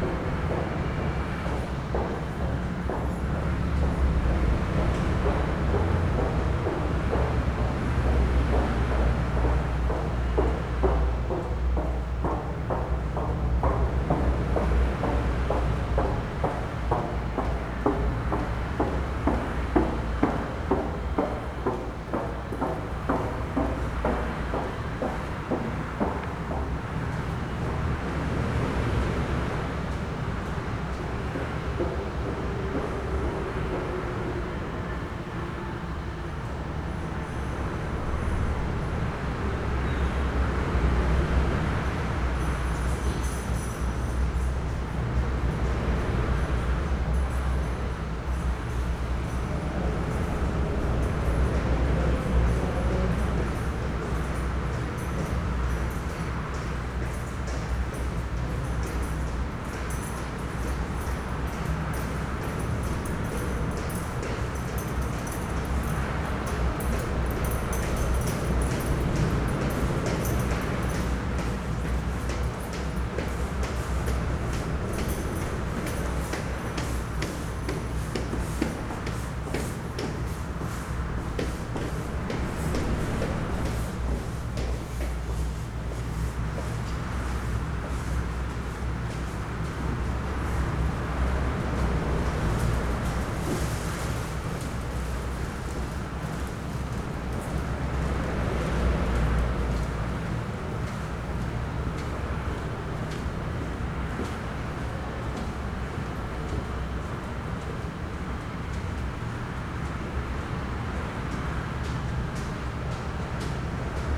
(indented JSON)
{
  "title": "Trowell, UK - 0ver the M1 ...",
  "date": "2017-05-15 14:00:00",
  "description": "Over the M1 ... on the walkway over the M1 that joins the services at Trowell ... Olympus LS 11 integral mics ... footsteps ... conversations ... traffic ...",
  "latitude": "52.96",
  "longitude": "-1.27",
  "altitude": "79",
  "timezone": "Europe/London"
}